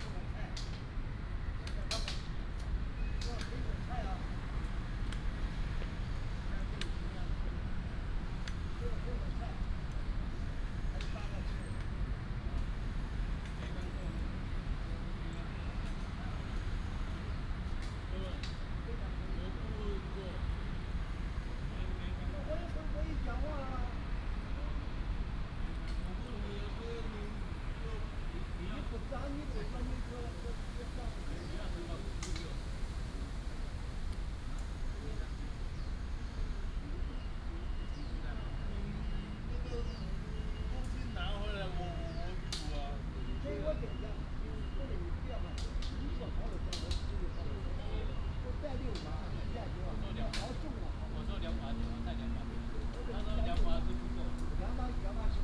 Nanya Park, North District, Hsinchu City - in the Park
A group of old people playing chess, wind, fighter, traffic sound, birds sound, Binaural recordings, Sony PCM D100+ Soundman OKM II